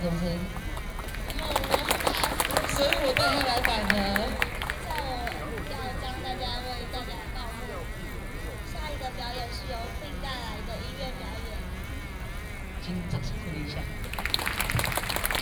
Liberty Square, Taipei - No Nuke
Opposed to nuclear power plant construction, Binaural recordings, Sony PCM D50 + Soundman OKM II